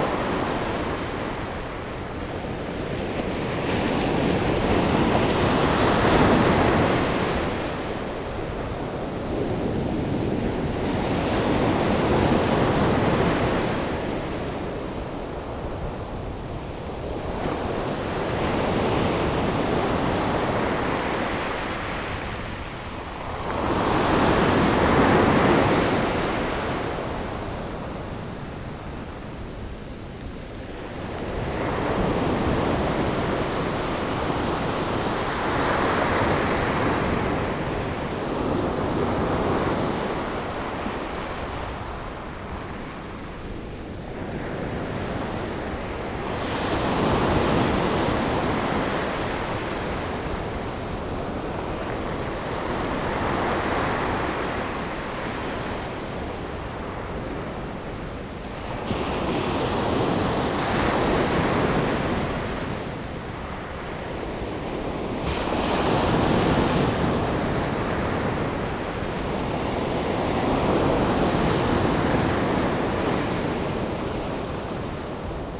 新城村, Xincheng Township - Sound of the waves
Sound of the waves, The weather is very hot
Zoom H6 MS+Rode NT4